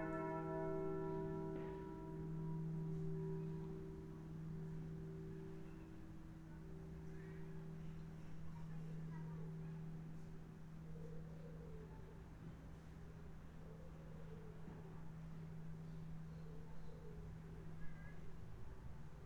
Wittmund, Deutschland - Bells of Wittmund / Glocken in Wittmund
Wittmund, Glocken, Kirche, Kirchenglocken, Ostfriesland, Niedersachsen, Deutschland, Europa, 14:00 Uhr, bells, church, church bells, East Frisia, Lower Saxony, Germany, Europe, 12:00 am